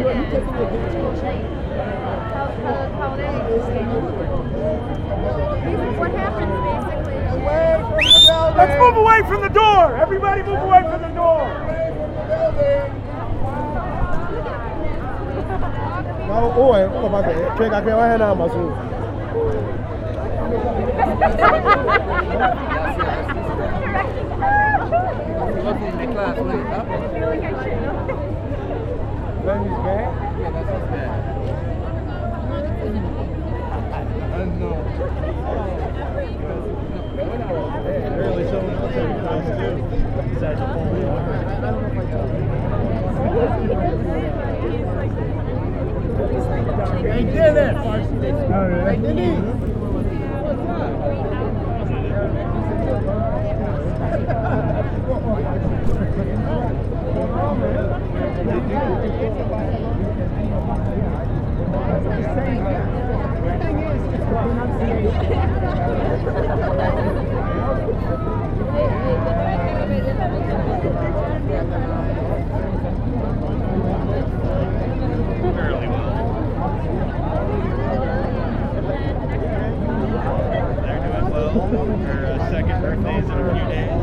1145 Wilson, chicago: TRUMAN COLLEGE during Fire Drill
During my arabic class, firedrill, people hanging around outside, truman college, chatter